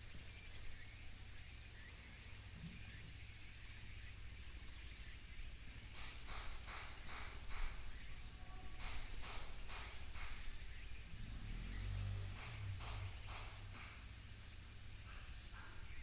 馬武督, Mawudu, Guanxi Township - Evening in the mountains
Evening in the mountains, Cicadas and birds
Guanxi Township, Hsinchu County, Taiwan, 14 August